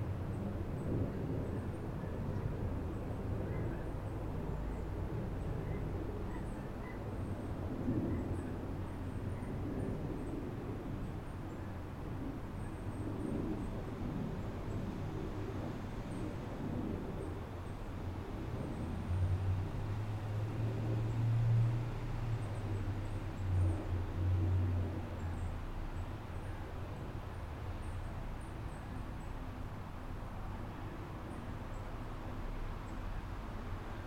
A field recording from the center of Nancy Holt's sculpture 'Rock Rings' situated on the south end of the campus of Western Washington University.
(Unfortunately, due to shadowing on G-Maps' satellite view the sculpture is not visible)
The sculpture is composed of two concentric walls made of rock and mortar, roofless with round 'windows' to the outside.
The simplicity and lack of symbols or overt meaning cause 'Rock Rings' to suggest a ruined dwelling or temple.
Like many other works of earth art, when 'Rock Rings' was originally creates it was situated in a relatively secluded area. As campus has grown, and the area has become more busy, anthropogenic noise has come to dominate the soundscape.
Situated very close to 'Rock Rings' is another notable work of Land Art Robert Morris' 'Steamwork for Western Washington University'.
More information about Rock Rings:
WA, USA